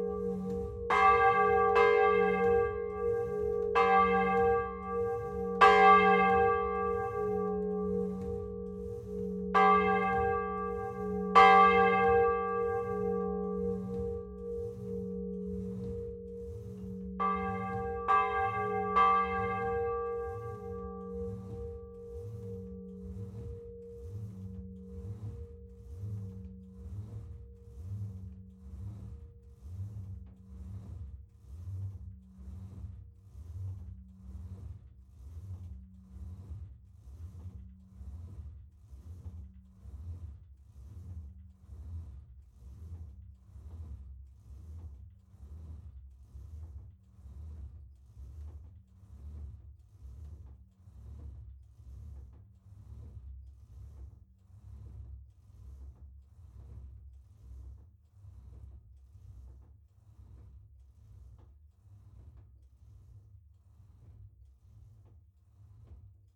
Le Bourg-Nord, Tourouvre au Perche, France - Prépotin (Parc Naturel Régional du Perche) - église
Prépotin (Parc Naturel Régional du Perche)
église - Le Glas
Normandie, France métropolitaine, France, 14 October 2020